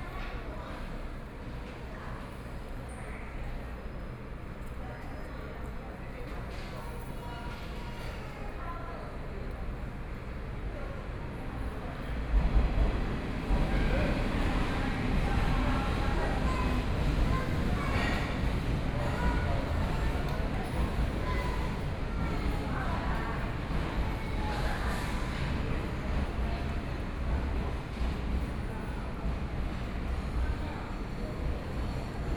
Yilan Station, Taiwan - In the station hall
In the station hall, Japanese tourists sound, Stations broadcast audio messages, Train traveling through the platform, Binaural recordings, Zoom H4n+ Soundman OKM II